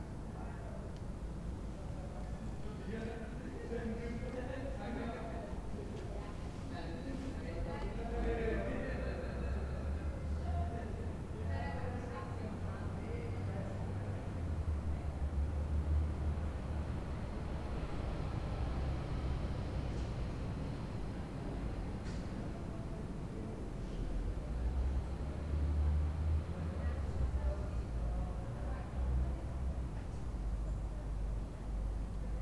people talking on a balcony
the city, the country & me: august 20, 2010
Berlin, Deutschland, 20 August